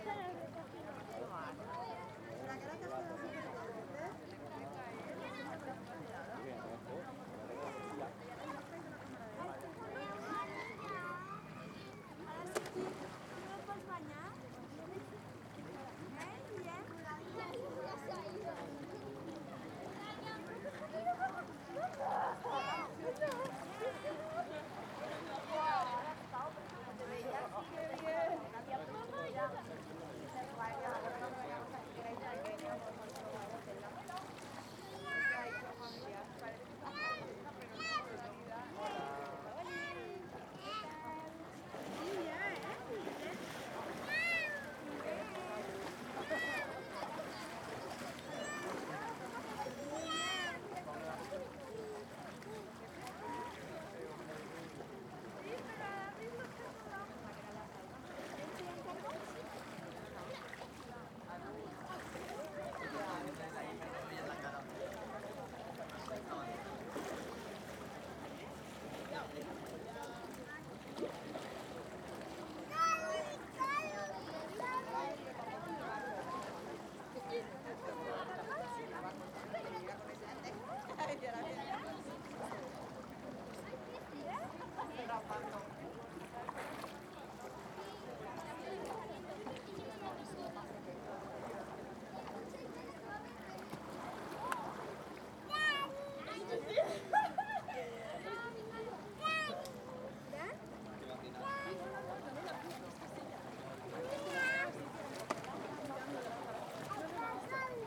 Partida Ca Po-cl Portic, Alicante, Espagne - Plage de Caliete - Javea - Espagne - Ambiance.
Plage de Caliete - Javea - Espagne
Ambiance.
ZOOM F3 + AKG C451B